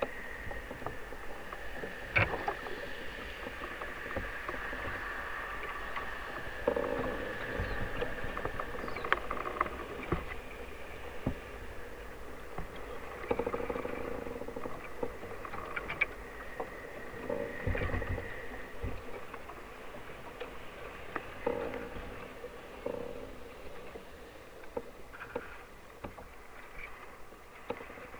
미조항 근처에서 대왕태나무 giant bamboo near Mijo village
8 bamboos sampled in a wild stand of giant bamboo...coastal sea breeze influence under...flanked by heavy industry tourism roading infrastructure customary to contemporary Korea